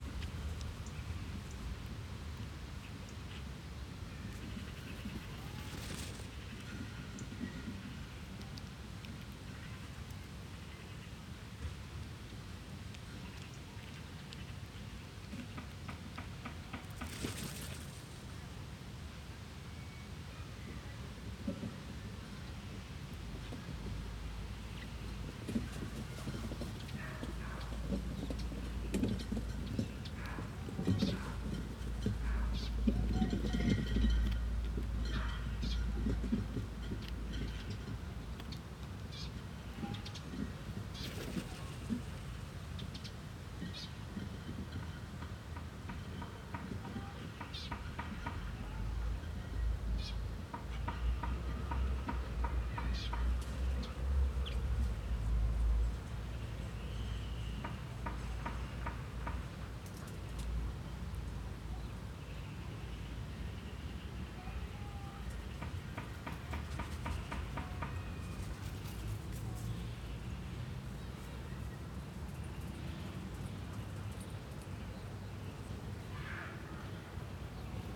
Pankebecken, Berlin - flaps of crows' wings, bike with trailer passing by, airplane.
[I used the Hi-MD-recorder Sony MZ-NH900 with external microphone Beyerdynamic MCE 82]